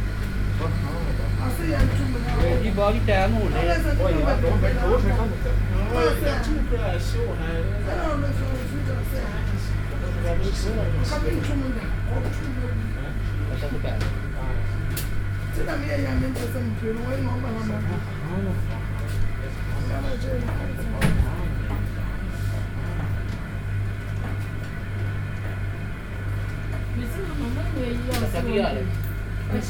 Brussels, Place Jules Dillens, Primus Automatic Laundry Wash
World Listening Day 2011.